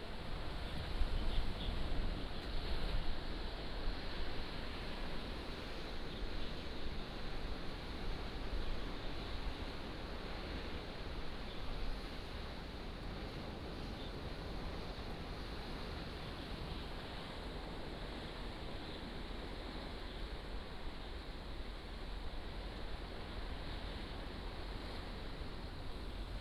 {"title": "Beigan Township, Lienchiang County - In the temple plaza", "date": "2014-10-13 15:19:00", "description": "In the temple plaza, Birdsong, Traffic Sound, Sound of the waves", "latitude": "26.21", "longitude": "119.97", "altitude": "22", "timezone": "Asia/Taipei"}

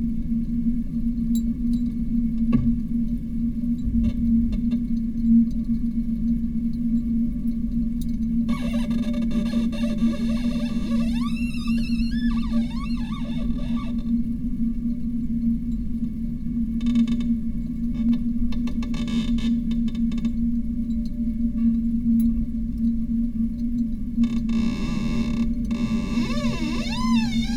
{"title": "yard window - frozen raindrops, glass bowl", "date": "2014-01-30 20:33:00", "latitude": "46.56", "longitude": "15.65", "altitude": "285", "timezone": "Europe/Ljubljana"}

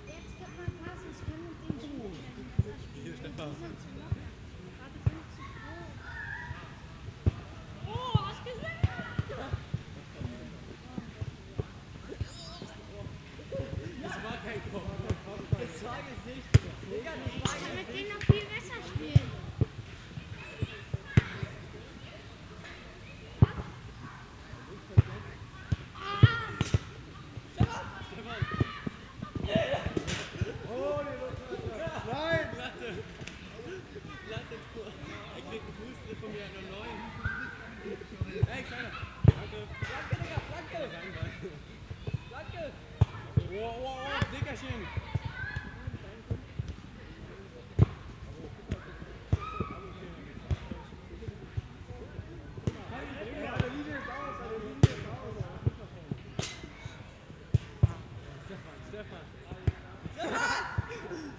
{"title": "Sportanlage Wutzkyallee, Berlin, Deutschland - soccer field, youngsters playing", "date": "2012-09-23 15:35:00", "description": "Berlin Gropiusstadt, sports area, youngsters playing soccer, Sunday afternoon, Equinox\n(SD702, Audio Technica BP4025)", "latitude": "52.42", "longitude": "13.47", "altitude": "47", "timezone": "Europe/Berlin"}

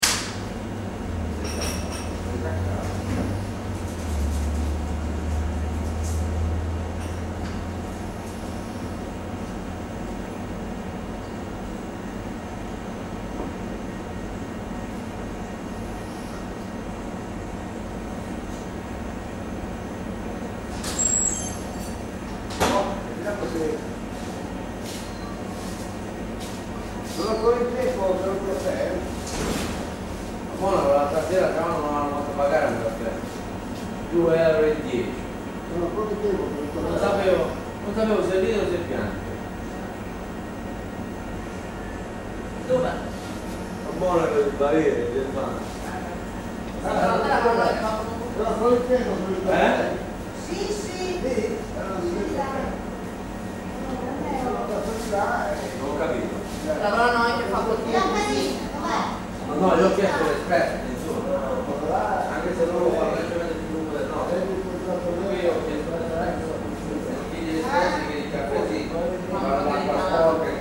{"title": "verona porta nuova - stazione porta nuova, snack bar", "date": "2009-10-21 22:30:00", "description": "stazione porta nuova, snack bar", "latitude": "45.43", "longitude": "10.98", "altitude": "63", "timezone": "Europe/Rome"}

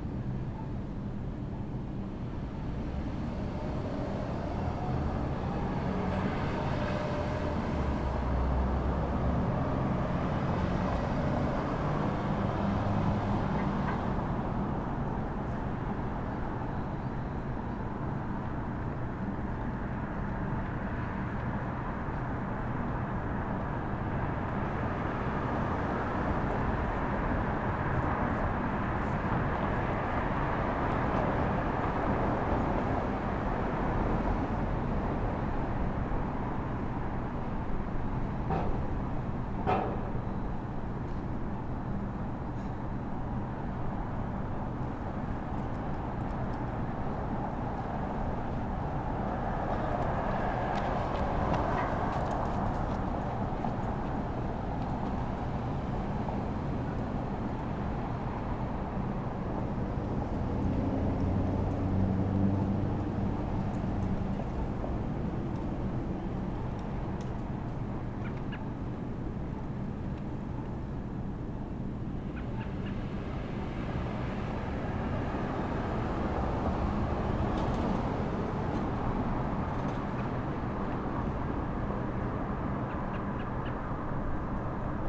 {"title": "Harbord St At St George St, Toronto, ON, Canada - Quiet Intersection; No Students", "date": "2020-04-15 16:30:00", "description": "This is normally a very busy intersection, with University of Toronto students walking past constantly, but today there was no one out, just birds, a few cars, and a walk audio signal that never stopped. (Recorded on Zoom H5.)", "latitude": "43.66", "longitude": "-79.40", "altitude": "116", "timezone": "America/Toronto"}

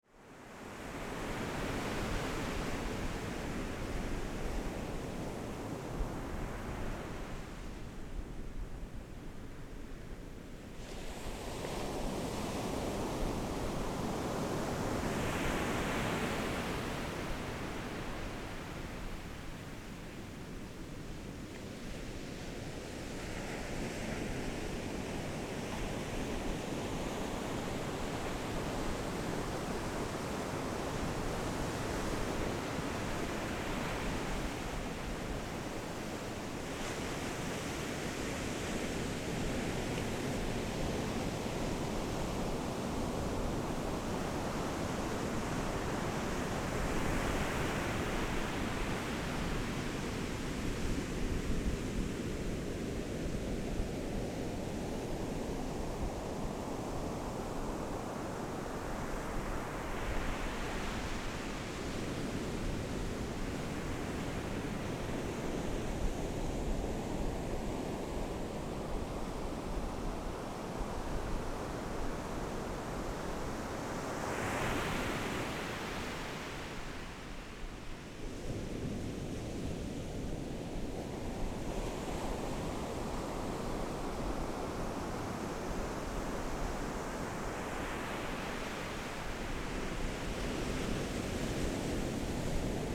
{"title": "坂里沙灘, Beigan Township - sound of the waves", "date": "2014-10-13 13:14:00", "description": "Sound of the waves, In the beach, Windy\nZoom H6 XY", "latitude": "26.22", "longitude": "119.98", "altitude": "7", "timezone": "Asia/Taipei"}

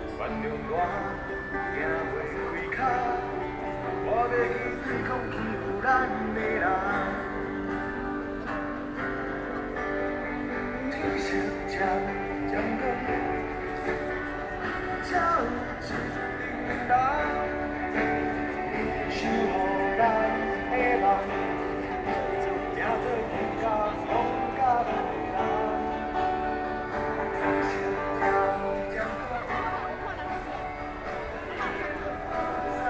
{"title": "Qingdao E. Rd., Taipei City - Student activism", "date": "2014-03-27 18:38:00", "description": "Student activism, Rock Band songs for the student activism, Students and the public to participate live recordings, People and students occupied the Legislative Yuan\nZoom H6+Rode NT4", "latitude": "25.04", "longitude": "121.52", "altitude": "11", "timezone": "Asia/Taipei"}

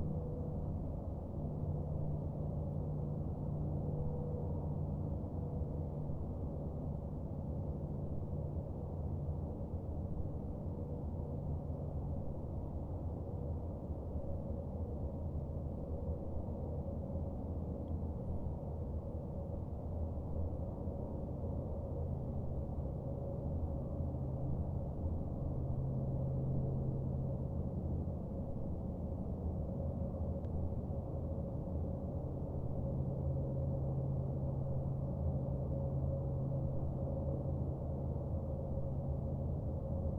{"title": "neoscenes: I-80 from Bonneville", "date": "2010-04-11 13:16:00", "latitude": "40.76", "longitude": "-113.90", "altitude": "1285", "timezone": "US/Mountain"}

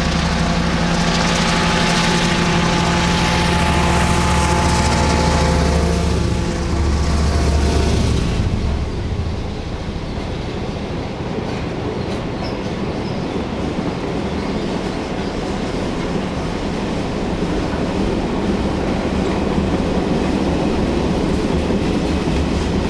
{"title": "Aachen - double heading SNCB 55 diesels (1997)", "latitude": "50.76", "longitude": "6.03", "altitude": "258", "timezone": "GMT+1"}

